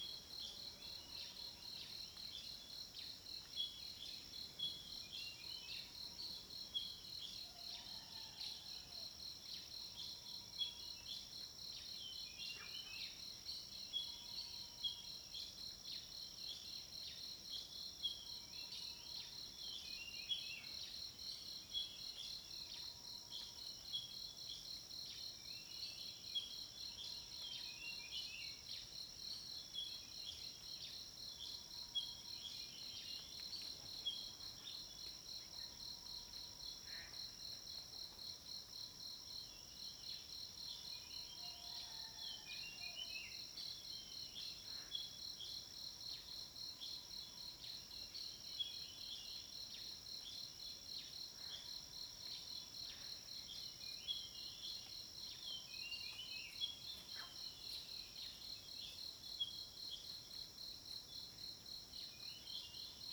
Zhonggua Rd., Puli Township 南投縣 - Insects and Bird sounds
Insects sounds, Bird sounds
Zoom H2n MS+ XY
13 July, ~05:00